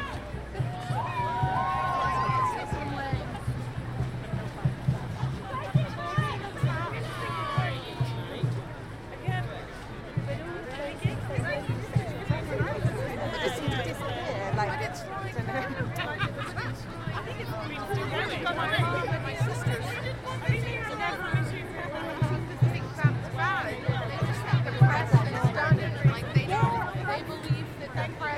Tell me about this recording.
This is the sound of the crowd from one listening place within the Woman's March 21st January, 2017. Recorded with binaural microphones. You can hear helicopters, drums, chat, chants, and me admitting that though I don't like crowds sometimes you have to brave them anyway.